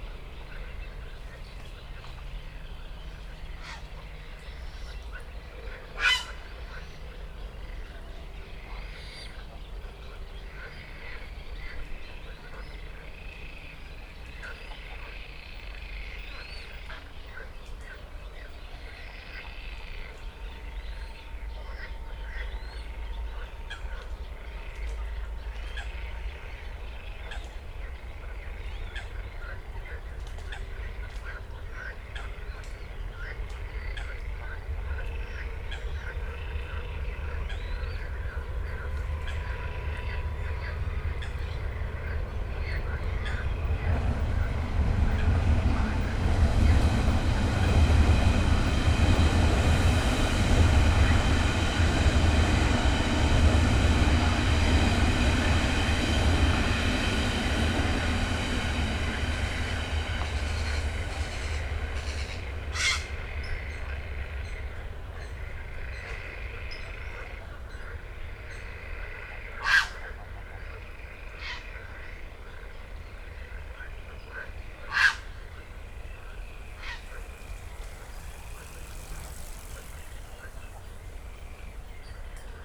place revisited on warm evening in late spring
(Sony PCM D50, DPA 4060)
16 June, 22:30